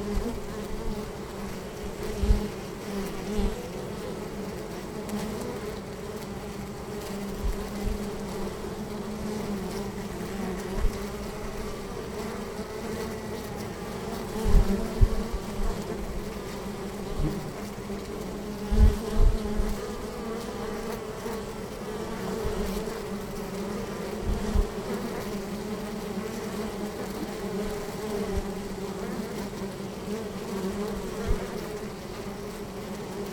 Prague, Czech Republic - Pigs and bees
Pigs and bees in Toulcův dvur in Hostivař.
4 August, ~13:00